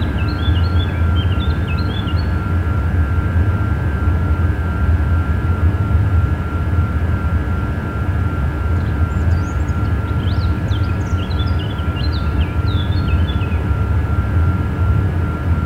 Onde Stationnaire crée par le Local d'alimentation électrique du bâtiment
Une onde stationnaire est le phénomène résultant de la propagation simultanée dans des sens opposés de plusieurs ondes de même fréquence et de même amplitude, dans le même milieu physique, qui forme une figure dont certains éléments sont fixes dans le temps. Au lieu d'y voir une onde qui se propage, on constate une vibration stationnaire mais d'intensité différente, en chaque point observé. Les points fixes caractéristiques sont appelés des nœuds de pression.
France métropolitaine, France, 6 May 2021